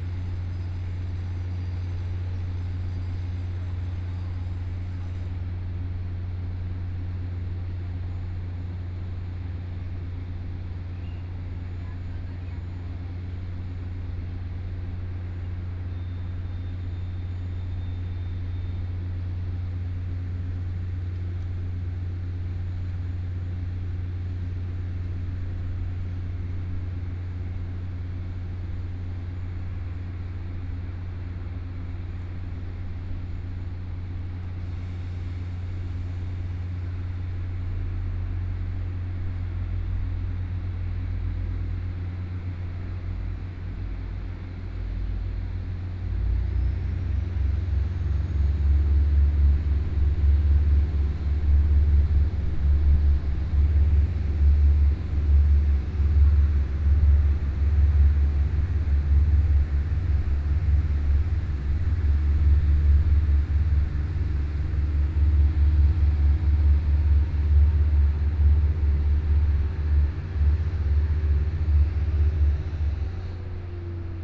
福文村, Chihshang Township - Next to the station
Next to the station, small Town